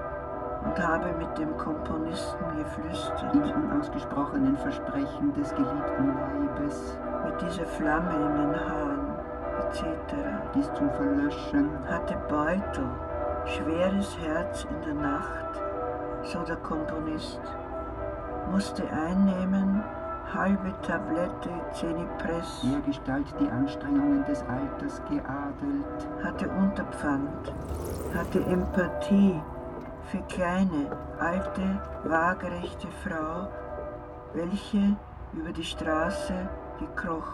Berlin, Schlossplatz - sound installation, church bells
sound installation at schlossplatz, wedding bells of nearby Berliner Dom. area of former Palast der Republik, location of the planned city palace. now here is nice grass and wooden catwalks, lots of space and great sights